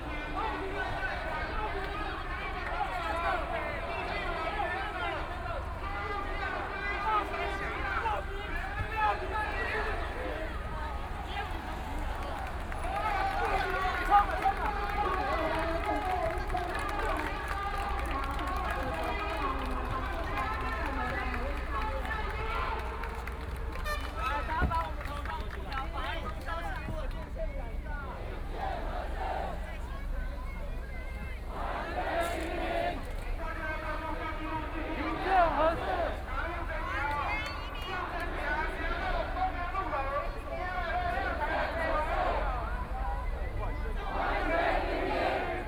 No-nuke Movement occupy Zhong Xiao W. Rd.
Sony PCM D50+ Soundman OKM II